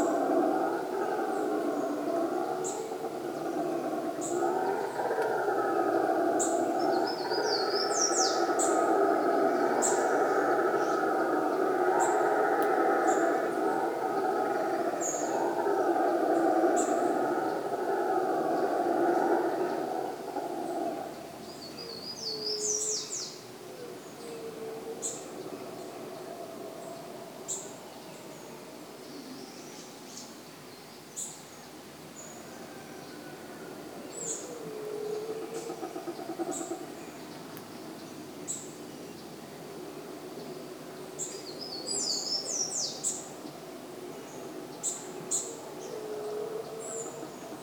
Estrada do Chapadão, Canela - RS, 95680-000, Brasil - Monkeys and birds in the Chapadão, Canela
Recorded on the Chapadão road, rural area of Canela, Rio Grande do Sul, Brazil, with Sony PCM-M10 recorder. The predominant sound is of monkeys known as bugios. Also heard are birds, dogs and chainsaws. #WLD2019
RS, Região Sul, Brasil, 18 July 2019